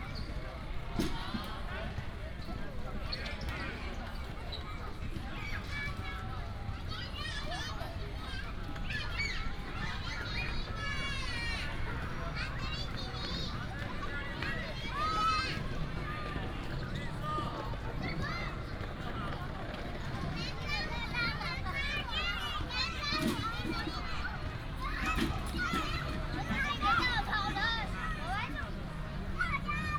In the park's kids game area, Traffic sound, The park gathers a lot of children every night